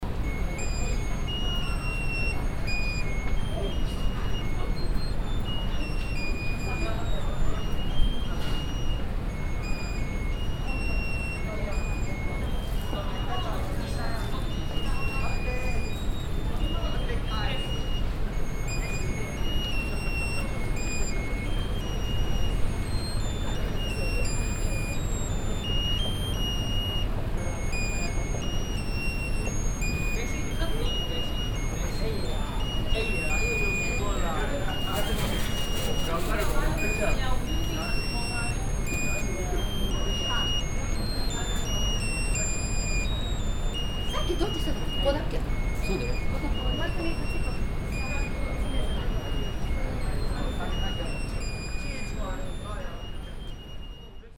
yokohama, chinatown, panda toy
Inside Yokohama Chintown in the early evening. People selling a pand bear looking toy that walks battery driven while continously playing the happy birthday melody. Here the toy is fixed with a tiny rope at a filled water bottle and is urged to walk around it. A kind of chinese torture I guess.
international city scapes - topographic field recordings and social ambiences